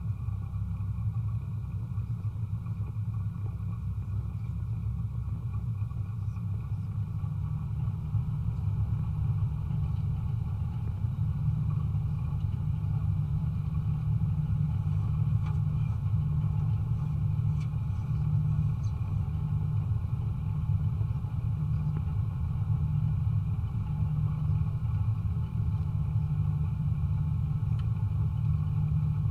Boat crossing 8900 Vila Real de Santo António, Portugal - Boat crossing to Ayamonte
Boat crossing from Vila Real de Santo António to Ayamonte. 3 piezos attached to the outdoor seats and metal top rail of the boat, capturing the motor and resonance of the boat. Recorded into a SD mixpre6, Mixed in post to stereo.
Algarve, Portugal